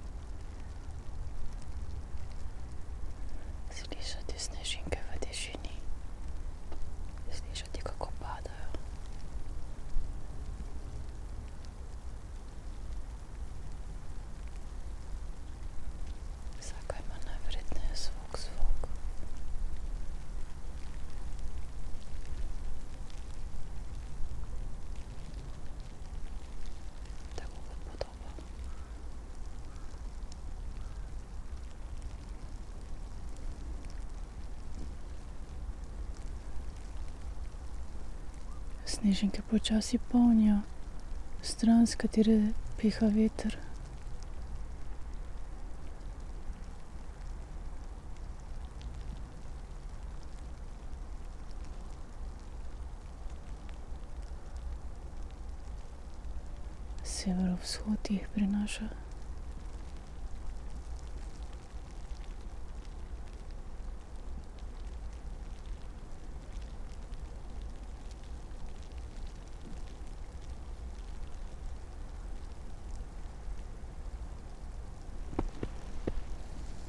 tree crown poems, Piramida - white
light snow, spoken words and whisperings, wind, snowflakes ...
Maribor, Slovenia, 24 January 2013, 16:19